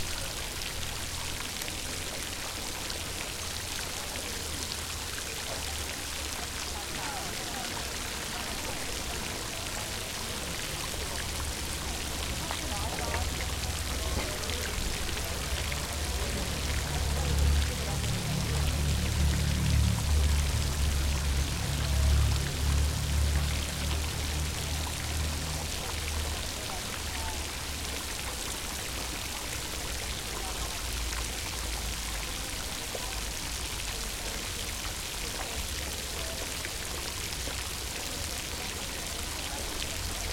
Perugia, Italia - fountain in piazza italia